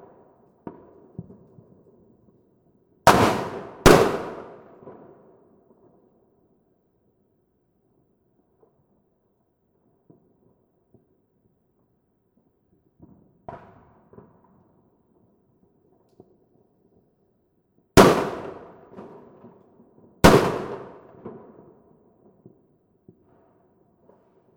Rijeka, Croatia, Happy New Recordings - Happy New Recordings 2017
Happy new year, and have everything you wish !!!